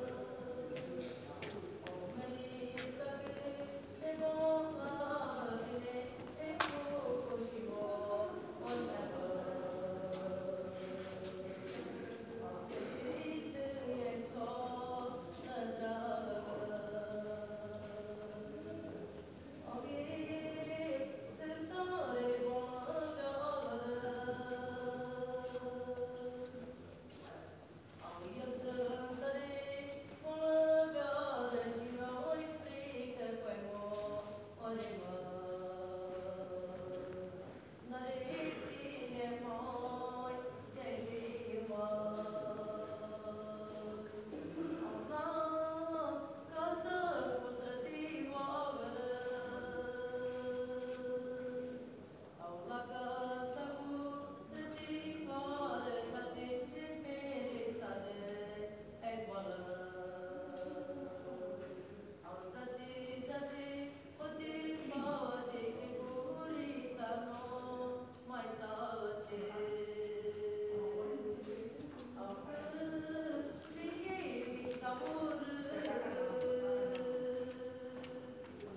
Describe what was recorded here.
recorded while waiting for a train, with recorder sitting in the pocket. Station ambience, a woman sits on the steps singing and begging for money. (Sony PCM D50)